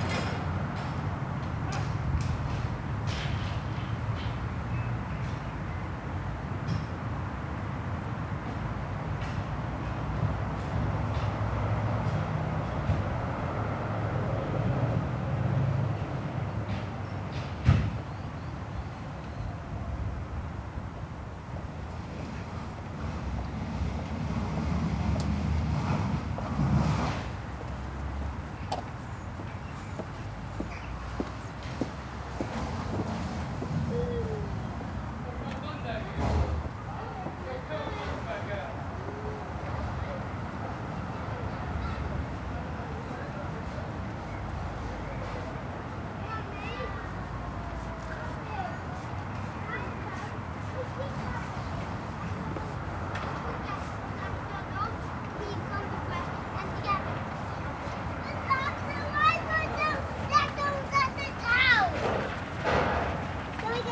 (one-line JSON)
{"title": "Behind the Waitrose carpark, just off London Road, Headington, Oxford, UK - Near London Road, listeing", "date": "2014-03-21 17:05:00", "description": "I am exploring the sounds of my commute. After I park at Barton, I walk down the very busy and noisy London road. This moment when I turn off that main road and start heading for the quiet backstreets is the first part of the journey where you can find details; you can hear individual footsteps, the sounds of birds, the sounds of someone working at the back of the supermarket. Yes, you can also hear the deep bass rumble of London Road, but it's interesting to find so much detail and variety even so close to that very noisy road. The wind was extremely strong on this day, sorry for the bass of the recordings, those Naiant X-X omnis don't do so well on a really breezy day...", "latitude": "51.76", "longitude": "-1.21", "altitude": "107", "timezone": "Europe/London"}